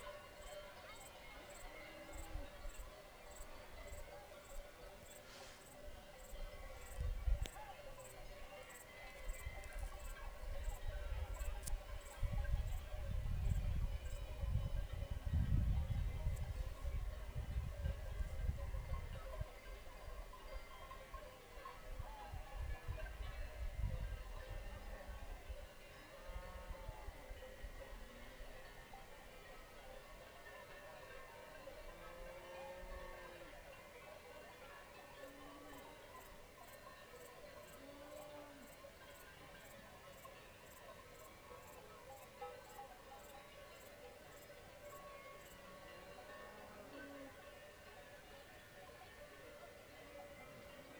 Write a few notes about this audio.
Am 12. August 2009 auf ca. 1500 Meter über NN, nahe der österreichischen Grenze, gegen 20:00 Uhr: Ein bevorstehendes Gewitter zwingt die Alpbetreiber, ihre Hirtenburschen loszuschicken, um die Rinder von einer höhergelegenen Alm auf eine tiefergelegene zu treiben. Bei genauem Hinhören hört man leise die Rufe der Hirtenburschen, die damit die Kühe antreiben. Am Vortag war bereits ein Rindvieh bei schlechten Witterungsbdingungen auf die östereichische Seite abgestürzt. Solche Unfälle passieren nicht selten, bedeuten aber immer einen gewissen wirtschaftlichen Schaden für die Betreiber einer solchen Alpe.